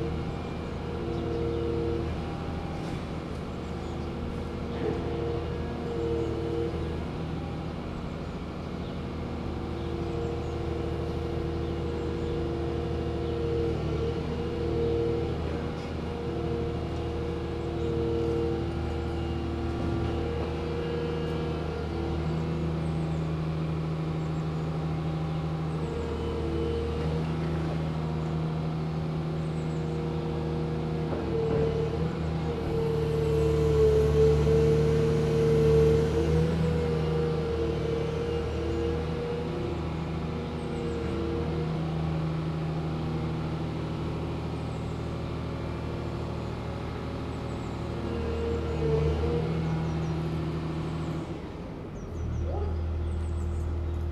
berlin: manitiusstraße - the city, the country & me: demolition of a supermarket
grab excavator demolishes the roof of a supermarket
the city, the country & me: january 23, 2012
23 January, 10:54